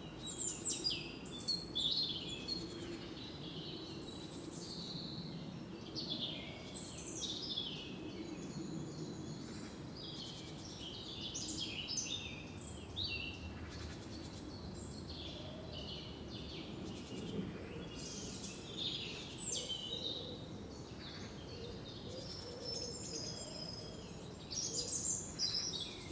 keyembempt secret spot - finally peace
weekend van da corona